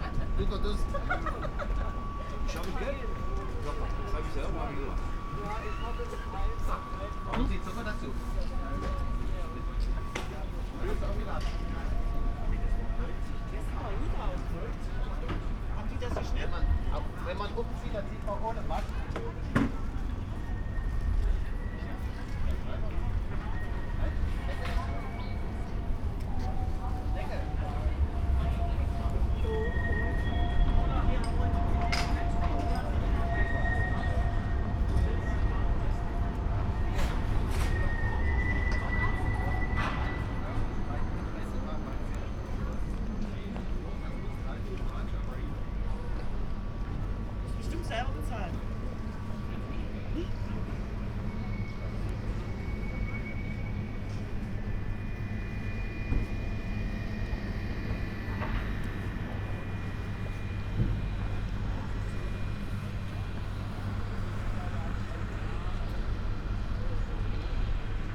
Marktplatz, Halle (Saale), Deutschland - market walk
walking over a small market at Marktplatz Halle, Monday morning
(Sony PCM D50, Primo EM172)